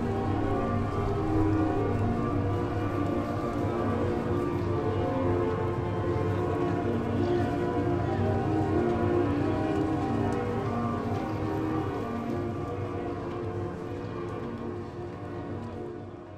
street organ [orchestrion], with the sound of the church bells. EBU workshop
June 2010